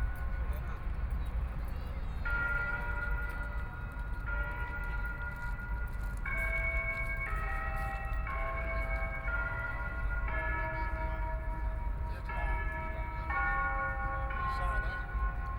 sound of the Boat traveling through, Many tourists, In the back of the clock tower chimes, Binaural recordings, Zoom H6+ Soundman OKM II
Shanghai, China, December 2013